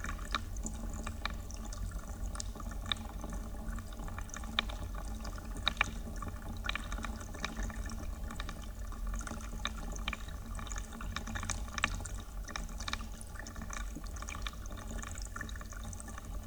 fallen branch of a tree in a spreinghead as heard through contact microphones